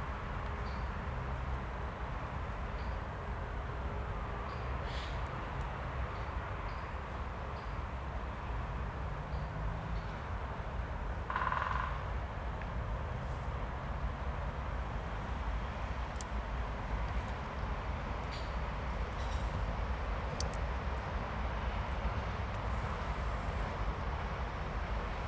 Great Spotted Woodpecker drumming in September!!, Praha-Braník, 147 00 Praha 4, Czechia - Great Spotted Woodpecker drumming in september!
I have never heard a woodpecker drumming in September and indeed had no idea that they did. But here is one amongst the dominant traffic noise of this area. The woodpecker was busy for 2 minutes before this recording. It took that long to set up the recorder.
Praha, Česko, 8 September 2022